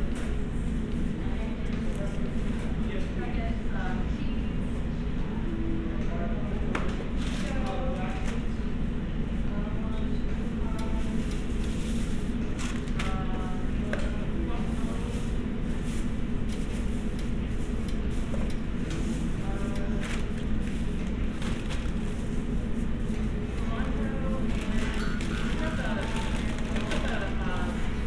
Cumberland Pkwy SE, Atlanta, GA, USA - Willys Mexican Grill
The ambience of a Mexican restaurant. Kitchen sounds, people ordering, crinkling of paper bags, etc. The restaurant was less busy than usual due to covid restrictions, and there was only one person dining in.
[Tascam DR-100mkiii & Roland CS-10EM binaural earbuds]
Georgia, United States, 10 January 2021, 19:58